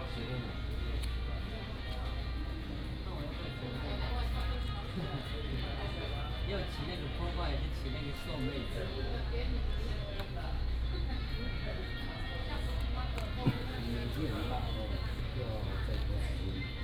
椰油村, Koto island - In the convenience store inside
In the convenience store inside, The island's only modern shops